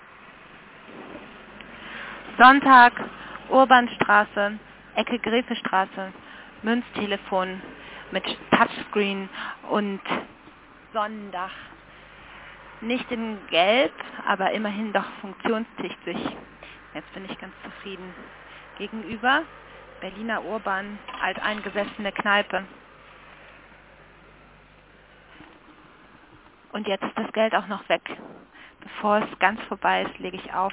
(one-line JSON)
{"title": "Münztelefon und Monitor, Urbanstraße, Berlin - touch screen 22.04.2007 13:45:10", "latitude": "52.49", "longitude": "13.42", "altitude": "40", "timezone": "GMT+1"}